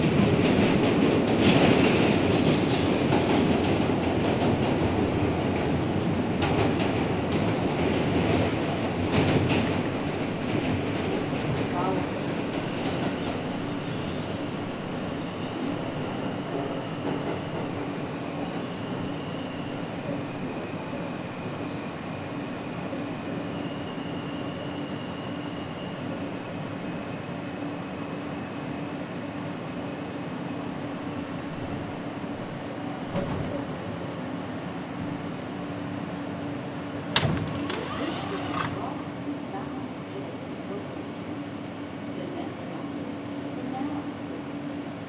Delancey St, New York, NY, USA - Commuting during COVID-19
Delancey Street/Essex Street train station.
This station connects F, J, and M train lines with people commuting to work from Brooklyn, Queens, and Coney Island. As a result, this station tends to be very crowded, especially during rush hour. This recording captures the soundscape of the station at 6:40 am (close to rush hour) emptied of people due to the Covid-19 quarantine.
Zoom h6